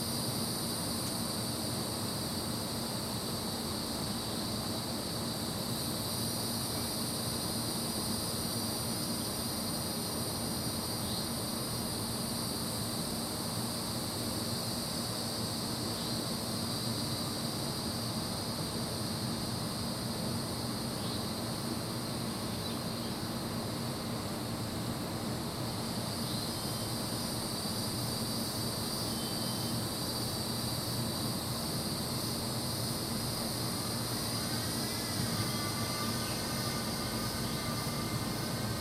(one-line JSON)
{"title": "Suffex Green Ln NW, Atlanta, GA, USA - A Summer Evening w/ Cicadas", "date": "2021-06-28 20:05:00", "description": "The sound of a typical summer evening near Atlanta, GA. Aside from the typical neighborhood sounds captured in previous recordings, the cicada chorus is particularly prominent around the evening and twilight hours. They start every day at about 5:30 or 6:00 (perhaps even earlier), and they continue their chorus until nightfall (at which time we get a distinctly different chorus consisting of various nocturnal insects). These are annual cicadas, meaning we hear them every single year, and are thus distinct from the 17-year cicadas being heard in other places in the country.", "latitude": "33.85", "longitude": "-84.48", "altitude": "299", "timezone": "America/New_York"}